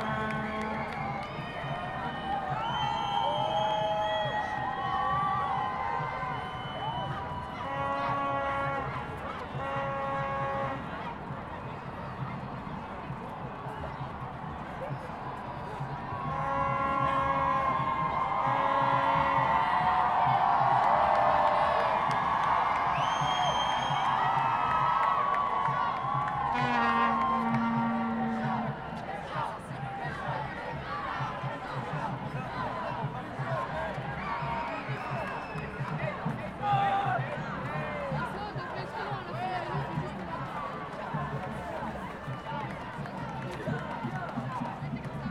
On est plus chaud que le climat!